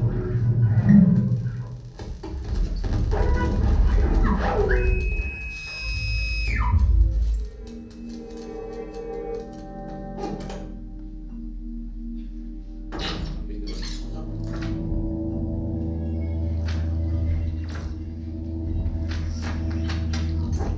cologne, deutz mülheimer str, gebäude, visual sound festival, michael vorfeld - koeln, deutz mülheimer str, gebäude 9, visual sound festival, die schrauber
soundmap nrw: social ambiences/ listen to the people - in & outdoor nearfield recordings